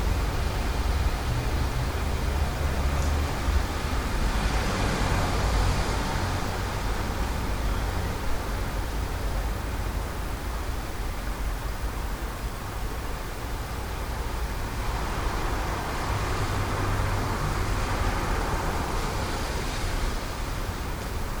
1st floor window - sonotope 1